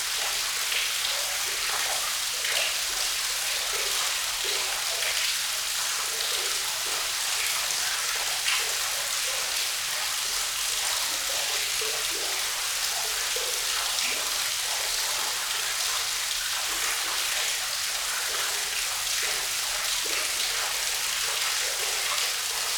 Kožbana, Dobrovo v Brdih, Slovenia - Krčnik gorge
Stream Krčnik in a gorge with waterfall. Microphones were hanging in the air. Microphones: Lom Uši Pro.